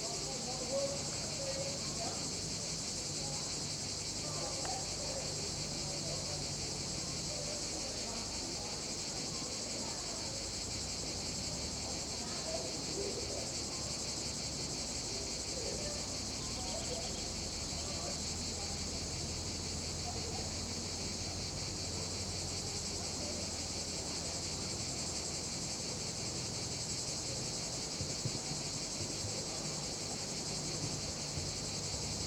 Rosières, Frankrijk - cricets ardeche
a lazy summer day